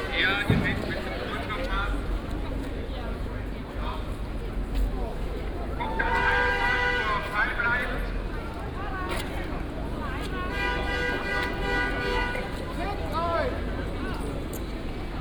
köln, bismarckstr., karneval - nubbel burning
burning of the nubbel, a poor scapegoat resonsible for all hidden and forbidden pleasures during carnival time.
(tech note: olympus ls5, okm2 binaural)